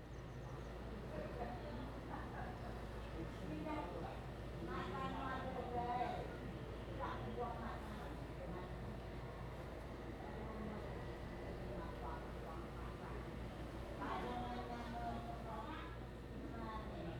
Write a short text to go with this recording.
Small village, Next to the bus stop, A group of elderly chat dialogue, Traffic Sound, Zoom H2n MS +XY